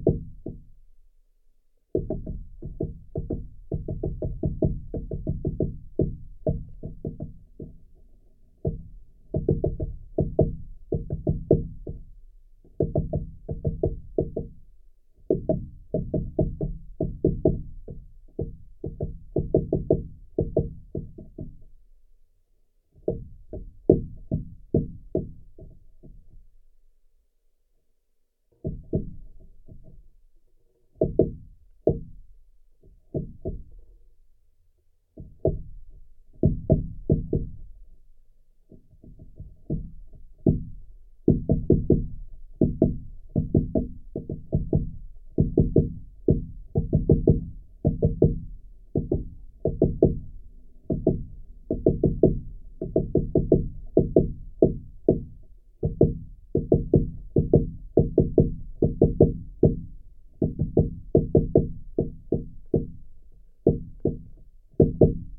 Utena, Lithuania, woodpecker's work
Geophone placed on the bottom of the dead pine trunk. Woodpecker on the top of the tree
Utenos rajono savivaldybė, Utenos apskritis, Lietuva, 31 August, ~15:00